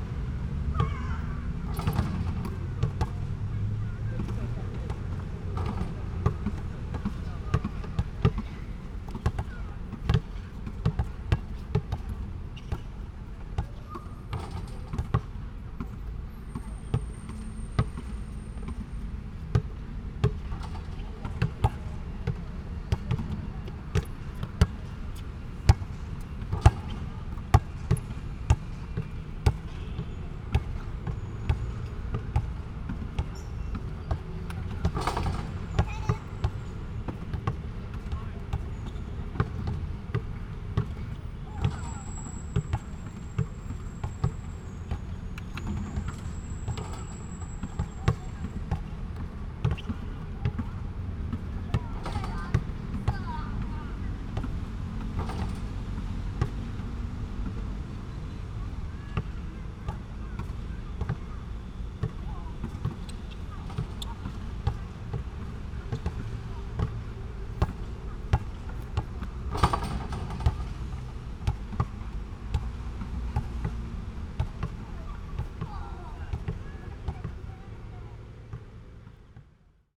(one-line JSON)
{"title": "Rende Park, Bade Dist. - the ground 2", "date": "2017-07-18 18:04:00", "description": "Place the microphone on the ground of the basketball court, Traffic sound, for World Listening Day 2017", "latitude": "24.94", "longitude": "121.29", "altitude": "142", "timezone": "Asia/Taipei"}